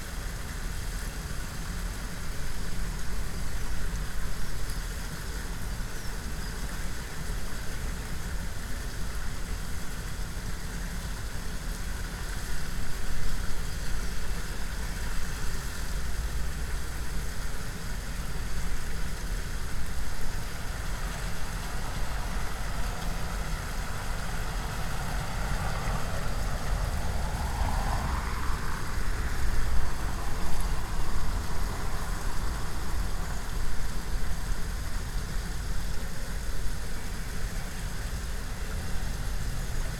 Voetgangersbrug Trekvlietplein Bontekoekade, Trekvlietplein, Den Haag, Netherlands - Trekvlietplein canal footbridge, Den Haag
Trekvlietplein canal footbridge, Den Haag